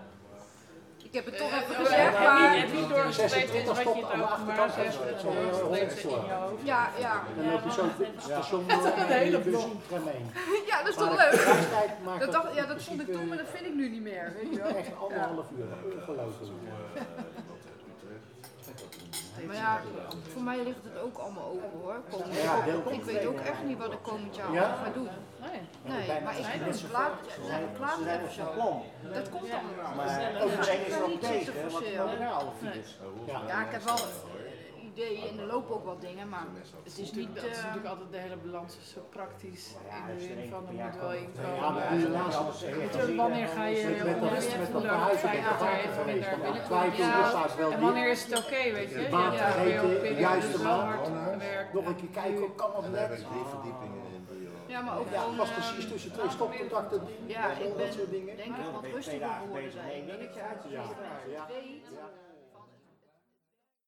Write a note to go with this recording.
weekly Opuh Koffie / Open Coffee The Hague